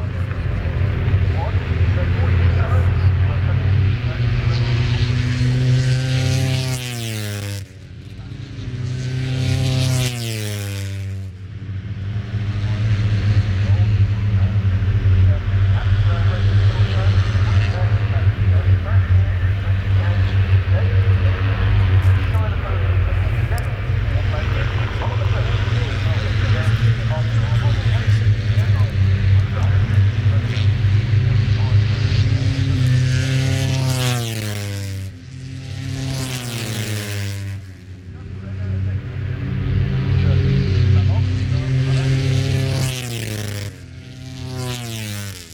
Silverstone Circuit, Towcester, UK - british motorcycle grand prix 2013 ...
moto3 fp3 2013...
30 August 2013